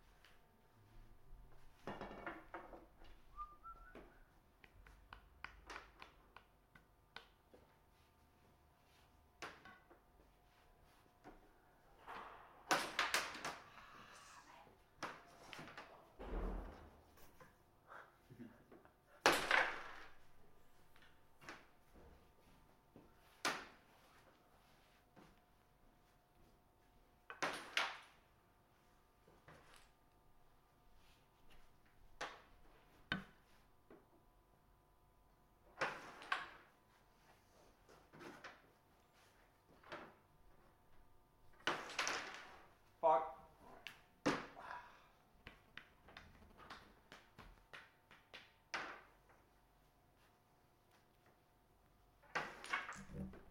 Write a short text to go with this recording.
Two guys playing bob at Krogerup Højskole